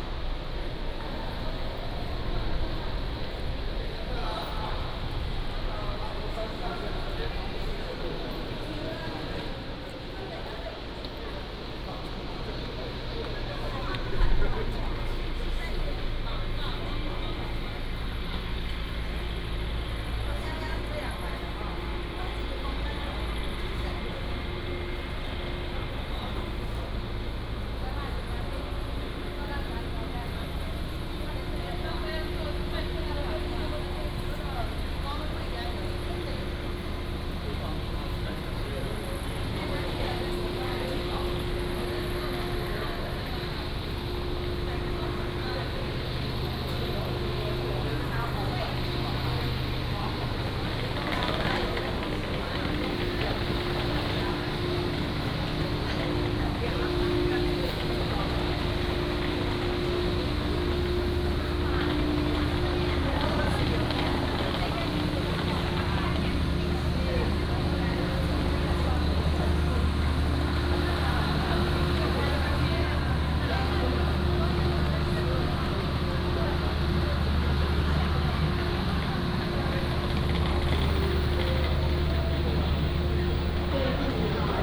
Kaohsiung International Airport, Taiwan - walking into the cabin
From the airport departure lounge, Towards the airport and into the cabin
Siaogang District, Kaohsiung City, Taiwan